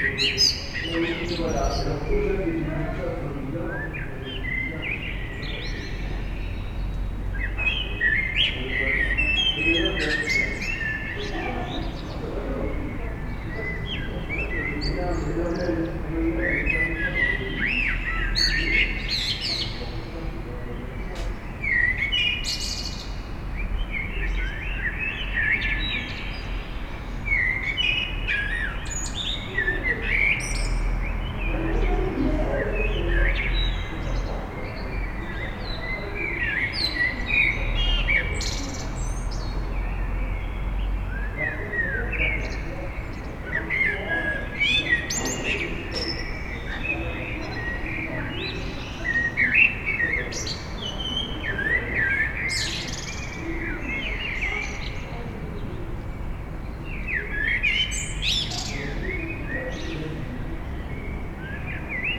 Brussels, Molierelaan, Birds and cats.
Avenue Molière, Des oiseaux et un chat sur la cour intérieure.